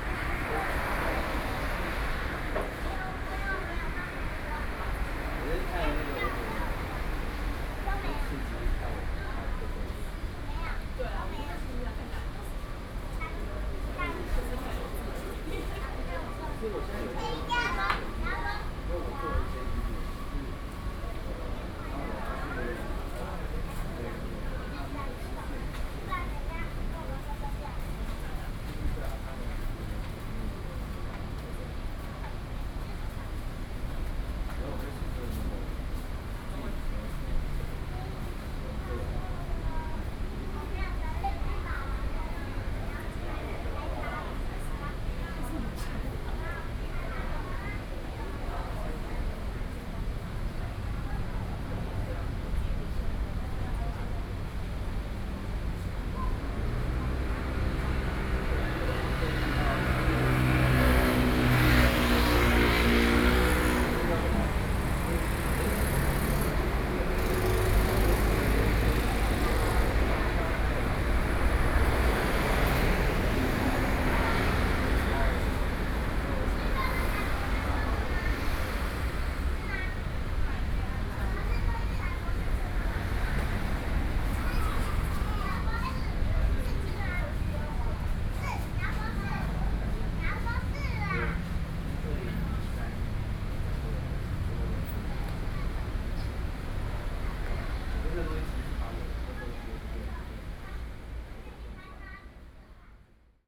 Taipei, Taiwan - Standing on the roadside
Standing on the roadside, Sony PCM D50 + Soundman OKM II